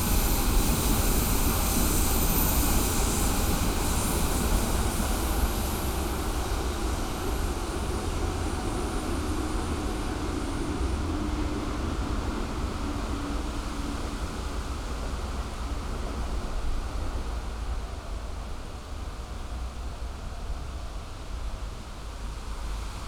Beermannstr., garden entrance near houses, night ambience, wind, city hum and passing train
(Sony PCM D50, DPA4060)